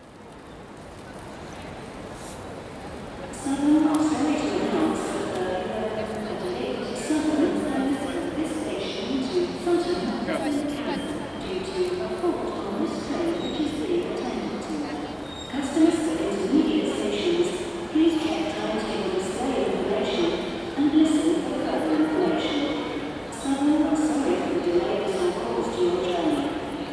Victoria Line, London, UK - Victoria Station, London.
Arrival and departure announcements at Victoria Station, London. Recorded on a Zoom H2n.
17 August, 12:15